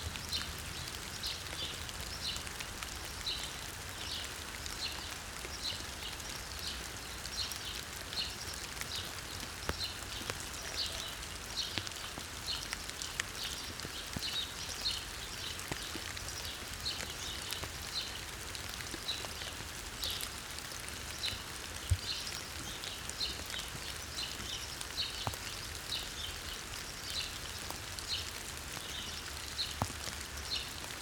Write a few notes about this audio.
In my parents garden in Colchester it is full of wildlife, include great tits, blue tits, house sparrows, wood pigeons and doves, also the odd squirrel or two. In this recording I recorded early morning for about an hour, listening from inside recording over 100m of microphone cable. Had a few interesting sounds around the mic!